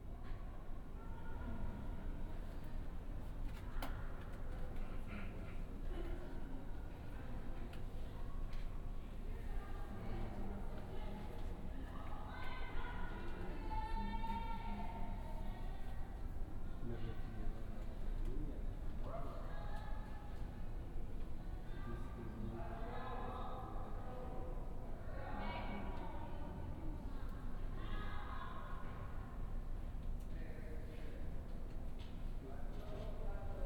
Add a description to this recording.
equipment used: Olympus LS-10 & OKM Binaurals, Inside a metro car on the orange line heading east, the train was shut off for almost 10 minutes. It was shockingly quiet with the engine and fans off... Listen for when it starts back up.